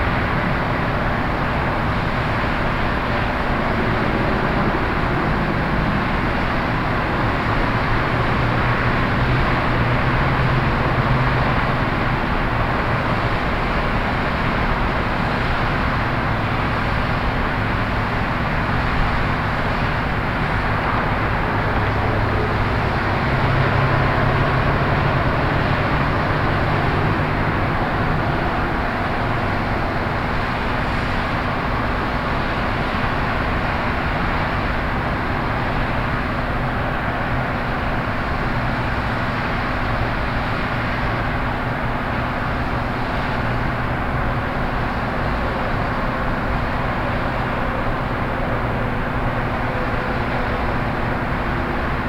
Fairfax, Lee Jackson Memorial Hwy, Road traffic
USA, Virginia, highway, road traffic, binaural